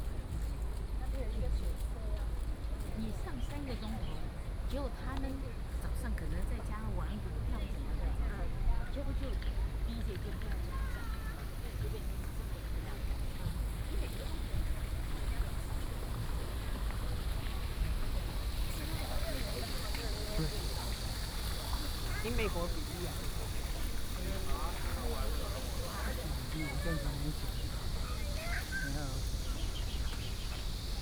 臺灣大學, Taipei City - Walking in the university
Walking in the university, Holiday Many tourists, Very hot weather
Da’an District, Taipei City, Taiwan